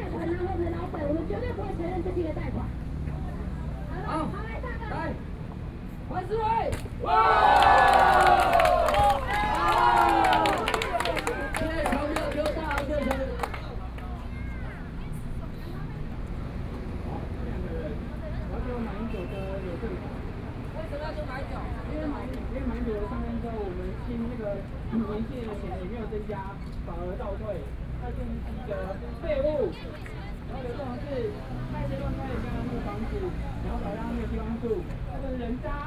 Chiang Kai-Shek Memorial Hall, Taipei City - Labor protests
Shouting slogans, Labor protests, Lost shoe incident, Binaural recordings, Sony PCM D50 + Soundman OKM II